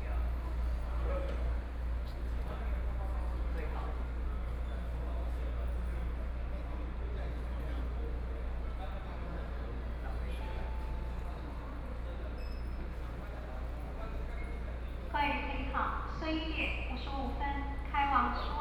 Yilan Station, Taiwan - On the platform
On the platform waiting for the train, Station broadcast messages, Trains arrive at the station, Binaural recordings, Zoom H4n+ Soundman OKM II
Yilan County, Taiwan, 8 November 2013, 12:03